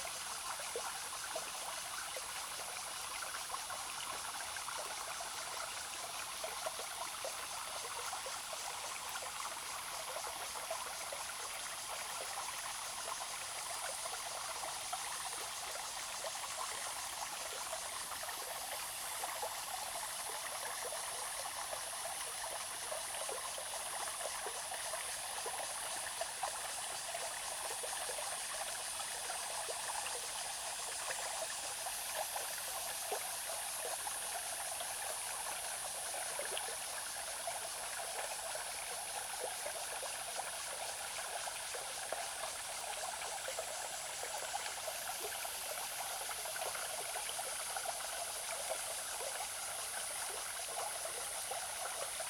種瓜坑溪, 成功里 - In the middle of a small stream
Small streams, In the middle of a small stream
Zoom H2n MS+ XY+Spatial audio
Nantou County, Taiwan, 27 July